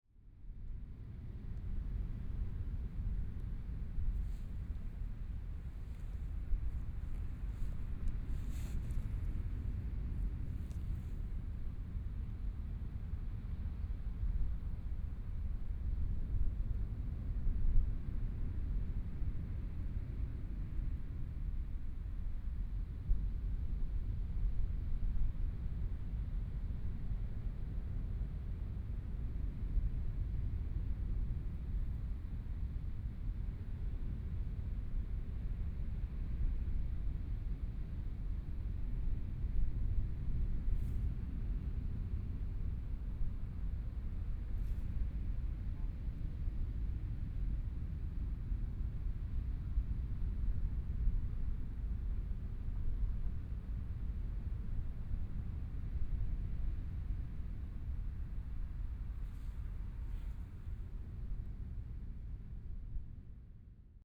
Taitung City, Taiwan - Sound of the waves
Sound of the waves Binaural recordings, Zoom H4n+ Soundman OKM II ( SoundMap2014016 -7)